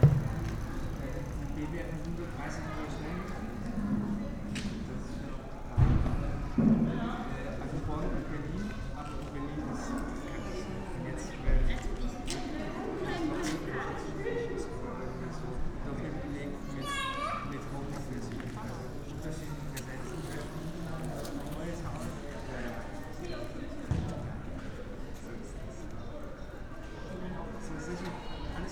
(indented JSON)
{
  "title": "Sanderstraße, Berlin, Deutschland - car-free Sunday afternoon",
  "date": "2021-06-06 15:20:00",
  "description": "listening to street sounds, Sunday afternoon early Summer, all cars have temporarily been removed from this section of the road, in order to create a big playground for kids of all ages, no traffic, no traffic noise, for an afternoon, this street has become a sonic utopia.\n(Sony PCM D50, Primo EM172)",
  "latitude": "52.49",
  "longitude": "13.43",
  "altitude": "47",
  "timezone": "Europe/Berlin"
}